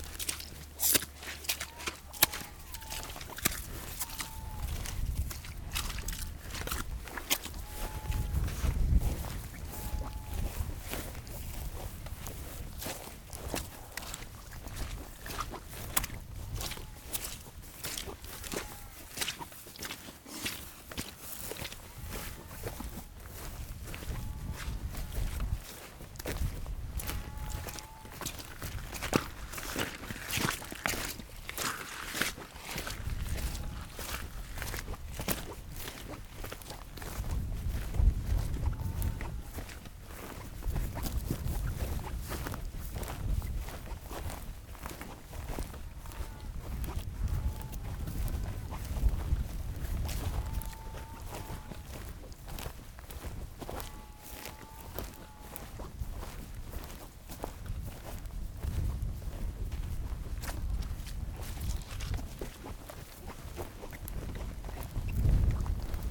Laurie's peat bank, between Blackton and Hestinsetter, Shetland Islands, UK - Carrying a sack of dried peats to the car
After recording Ingrid cutting the peat from Laurie's peat bank, I recorded the sound of her carrying it to the car, picking up the wind roaming wildly over the treeless landscape, and the boggy wet ground underneath us as we walked. I carried a few peat sacks myself, they are very heavy, and I can only imagine how painful it must have been to take the peats in a keshie, which is a big woven basket with a string that goes round the front of your shoulders. I can't imagine wanting to knit socks at the same time, but economic necessity meant that this is exactly what many Shetland women of the time were forced to do, in order to make a small income from the sale of stockings whilst also doing the work needed to keep the home going (often while the man of the house was fishing at sea). Recorded with Naiant X-X microphones and FOSTEX FR-2LE.